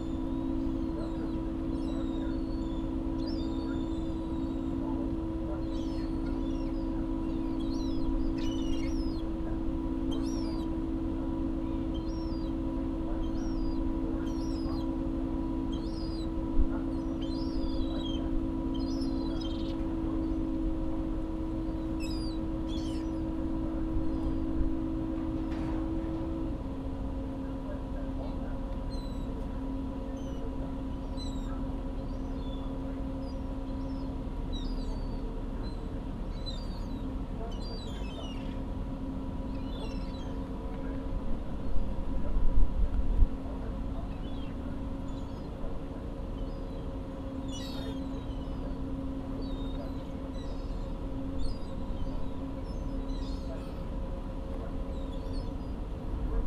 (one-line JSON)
{"title": "Loyalist Pkwy, Glenora, ON, Canada - Glenora - Adolphustown ferry", "date": "2021-11-17 15:00:00", "description": "Recorded from the car window on the ferry from Glenora to Adolphustown in Prince Edward County, Ontario, Canada. Zoom H4n. Much more wind noise than I would have liked, but removing it with a low-cut filter would also have affected other parts where that particular range is desired (engine noise of ferry, etc.)", "latitude": "44.04", "longitude": "-77.06", "altitude": "73", "timezone": "America/Toronto"}